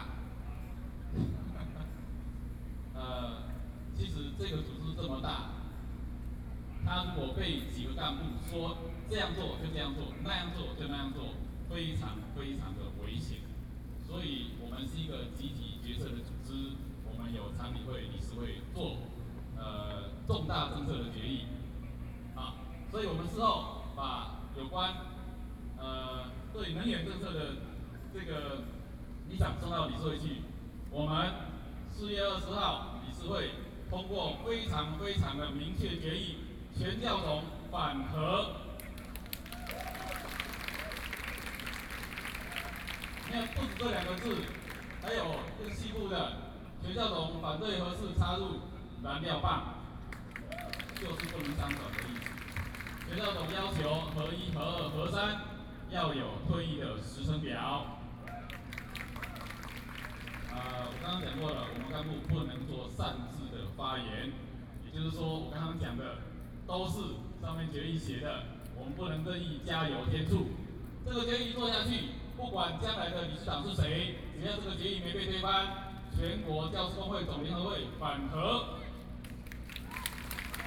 Taipei, Taiwan - Anti-Nuclear Power
Different social movement groups speech, Anti-Nuclear Power, Zoom H4n+ Soundman OKM II